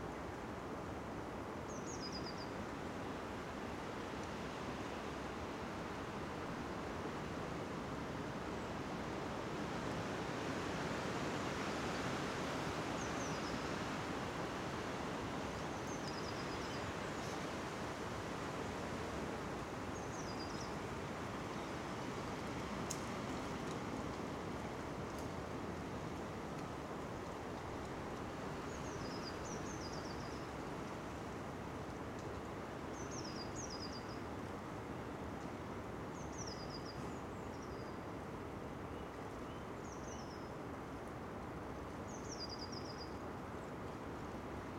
Pasiliai, Lithuania, windy soundscape
strong wind day...
Panevėžio apskritis, Lietuva, March 2020